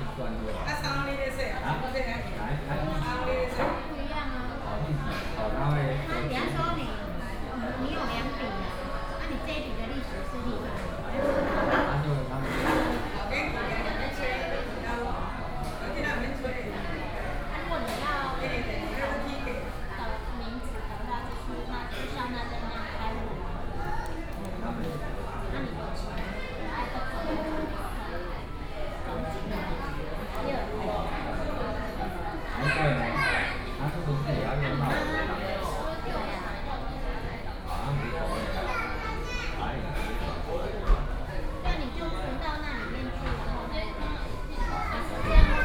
麥當勞-高雄新大勇店, Yancheng Dist., Kaohsiung City - In the fast food restaurant
In the fast food restaurant(McDonald's )